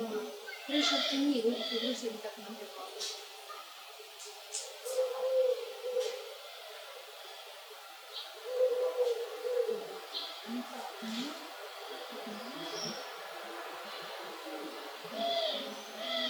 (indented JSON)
{
  "title": "вулиця Трудова, Костянтинівка, Донецька область, Украина - тайный разговор",
  "date": "2019-06-08 11:41:00",
  "description": "Шум ветра, воркование голубей и голоса двух собеседниц\nЗвук: Zoom H2n и Boya 1000l",
  "latitude": "48.54",
  "longitude": "37.69",
  "altitude": "104",
  "timezone": "Europe/Kiev"
}